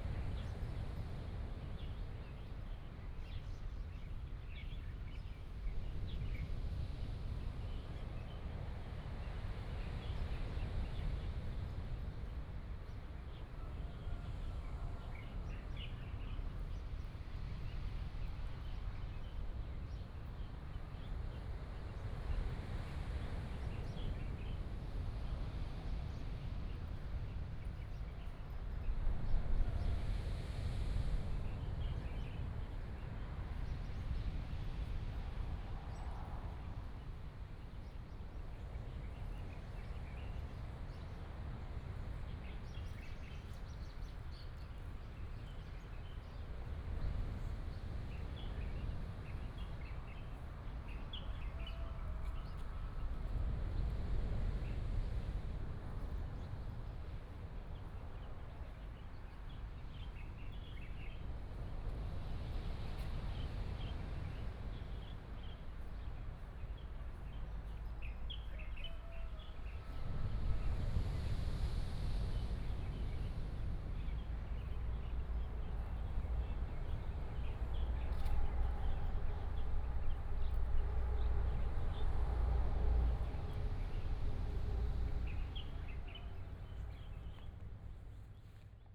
Qianxi St., Taimali Township, Taitung County - Morning at the seaside
at the seaside, Bird song, Sound of the waves, Chicken cry, Traffic sound
15 March, ~07:00